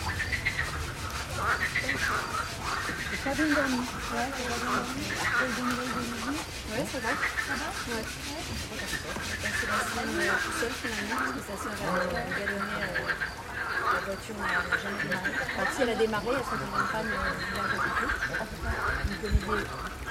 The song of frogs in a pond. Presence of a few ducks and a handyman cutting a bicycle frame